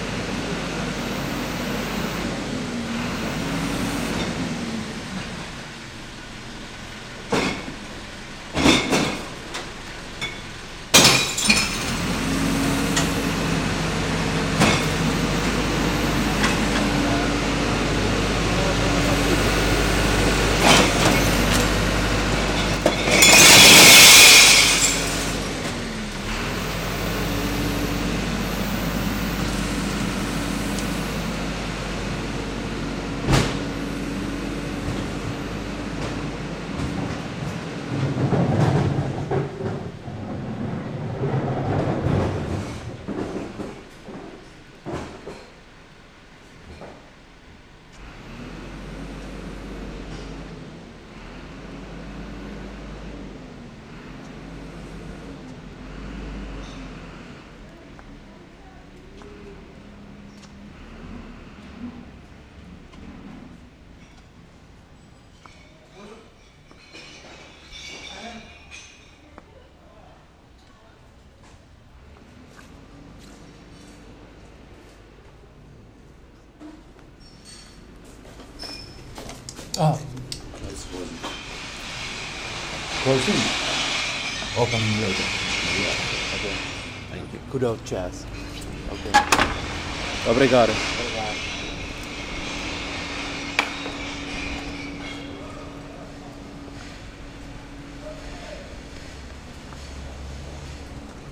Rua da Barroca, Lissabon, Portugali - Garbage truck in Bairro Alto
Garbage truck shattering glass in Bairro Alto.